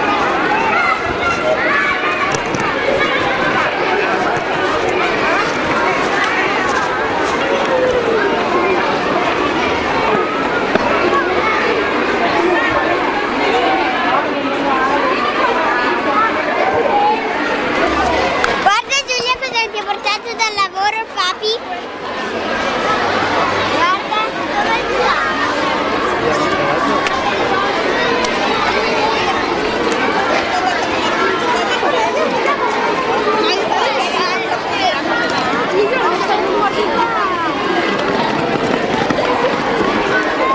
via 4 Novembre, Parabiago, Scuole Manzoni